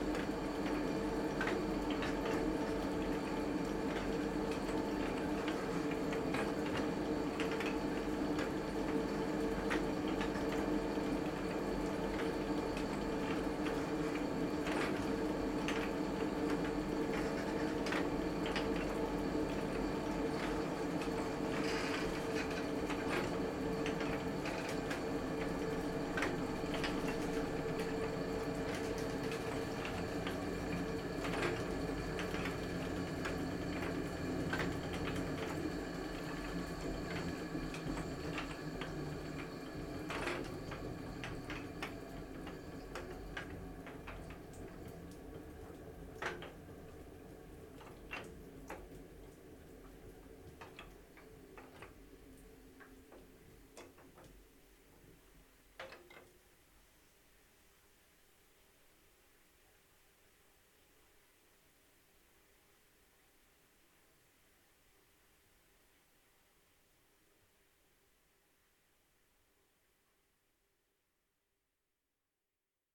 Rue du Moulin, Lugy, France - Moulin de Lugy - Intérieur
Moulin de Lugy - côte d'Opale
Roue Hydraulique
ambiance intérieure.
Hauts-de-France, France métropolitaine, France, 18 July 2019, 11:00